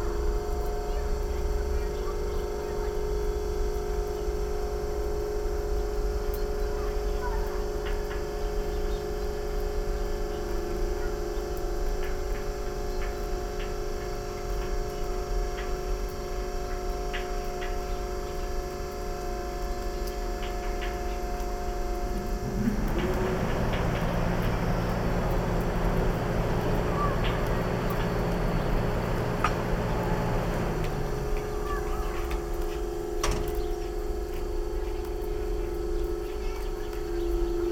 Severodvinsk, Russia - conditioner in the backyard shop Majak
conditioner in the backyard shop Majak
recorded on zoom h4n + roland cs-10em (binaural recording)
Кондиционер во дворе магазина "Маяк"